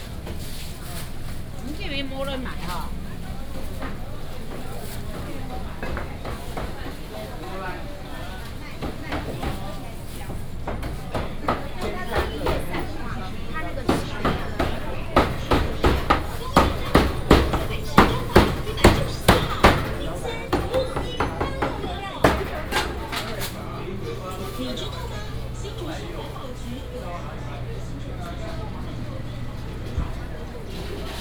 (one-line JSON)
{"title": "關東綜合市場, East Dist., Hsinchu City - Traditional integrated market", "date": "2017-09-12 09:18:00", "description": "walking in the Traditional market, vendors peddling, Binaural recordings, Sony PCM D100+ Soundman OKM II", "latitude": "24.78", "longitude": "121.02", "altitude": "79", "timezone": "Asia/Taipei"}